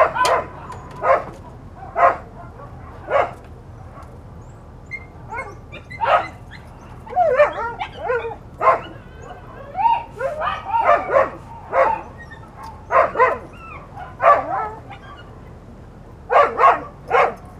Valparaíso, Chili - Dog barking in the hills of Valparaiso (Chile)
In a street from the high part of Valparaiso, on top of the hill, a dog barking, some others answering far away. Light voices of neighbors and light wind.
Recorded by a MS Setup Schoeps CCM41+CCM8
In a Cinela Leonard Windscreen
Sound Devices 302 Mixer and Zoom H1 Recorder
Sound Reference: 151202ZOOM0008
GPS location isn't exact.